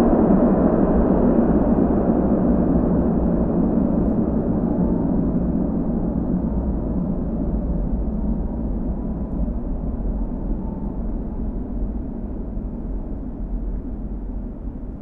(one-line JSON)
{"title": "Rouen, France - Jeanne d'Arc bridge", "date": "2016-07-23 13:30:00", "description": "Below the Jeanne d'Arc bridge, with the heavy sound of the tramways.", "latitude": "49.44", "longitude": "1.09", "altitude": "1", "timezone": "Europe/Paris"}